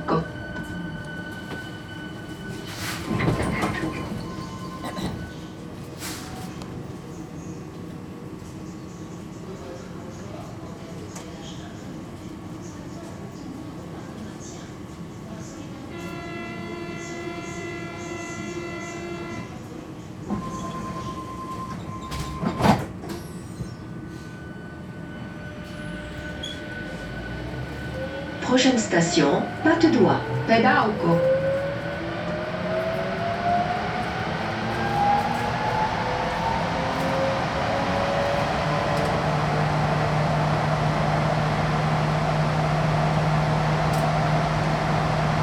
Saint - Cyprien, Toulouse, France - Metro saint Cyprien
Metro station... with this automatic female voice talking in french and Occitan.